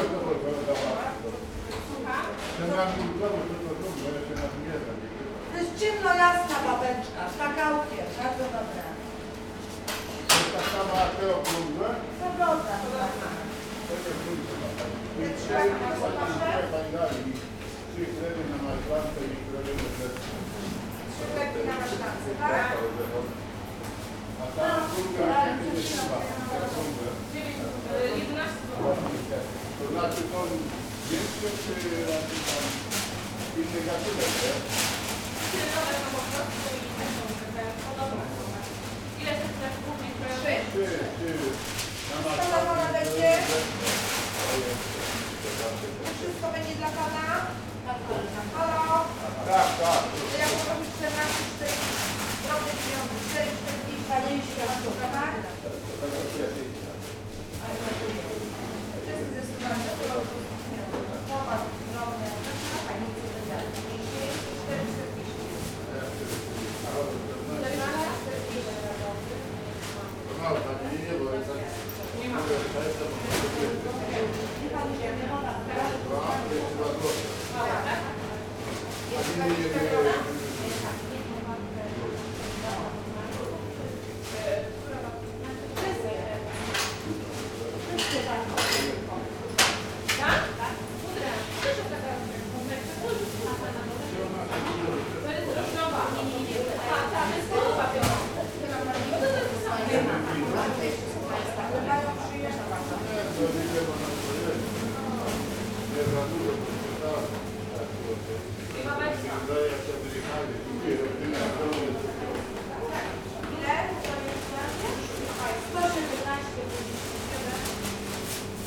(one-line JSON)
{"title": "Poznan, Jana III Sobieskiego housing estate - long line at the bakery", "date": "2014-04-18 10:45:00", "description": "waiting in a long line at the baker's shop. the place was very busy that day due to Easter. plenty of people getting bread for holidays. shop assistants explaining the different kind of breads to customers and taking orders.", "latitude": "52.46", "longitude": "16.91", "altitude": "102", "timezone": "Europe/Warsaw"}